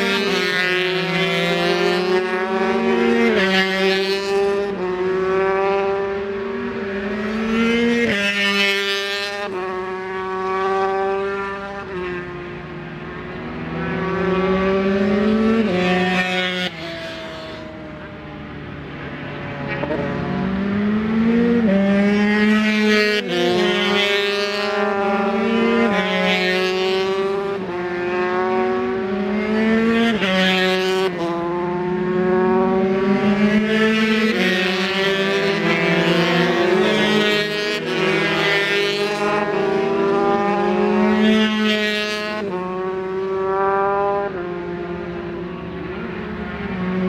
Stapleton Ln, Leicester, UK - British Superbikes 2004 ... 125 Qualifying ...
British Superbikes 2004 ... 125 qualifying ... Edwina's ... one point stereo mic to minidisk ...
17 July 2004, 09:30